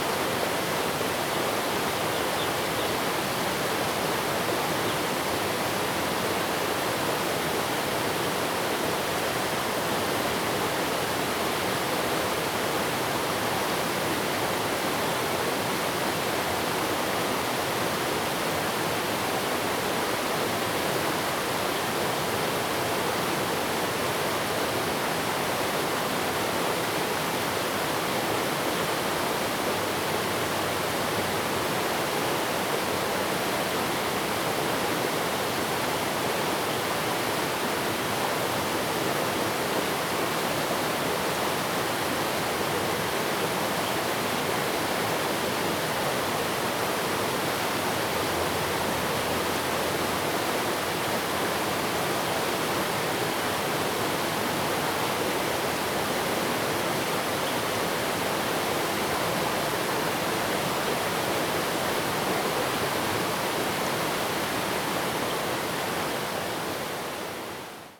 stream, Bridge
Zoom H2n MS +XY